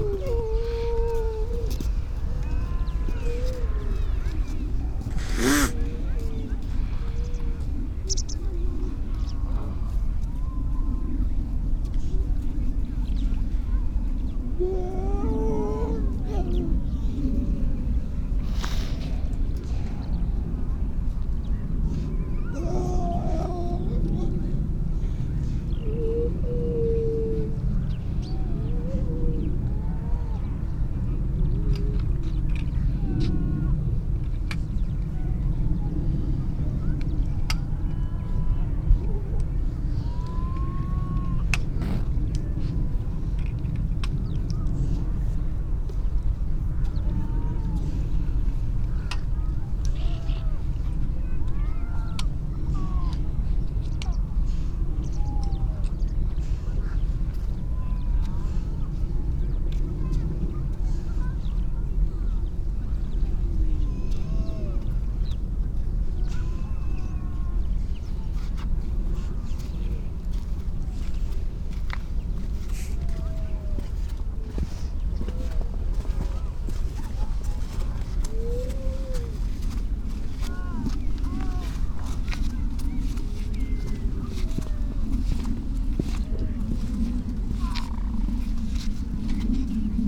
Unnamed Road, Louth, UK - grey seals ... donna nook ...
grey seals ... donna nook ... generally females and pups ... SASS ... birds calls ... skylark ... starling ... pied wagtail ... meadow pipit ... redshank ... dunnock ... curlew ... robin ... crow ... all sorts of background noise ...